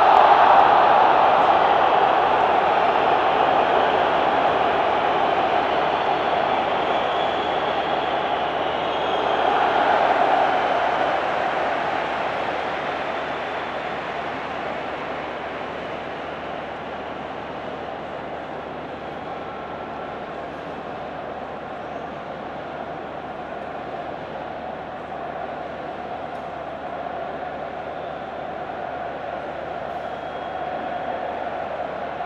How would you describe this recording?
Stade Vélodrome - Marseille, Demi finale Euro 2016 - France/Allemagne, Prise de son et ambiance à l'extérieure du stade.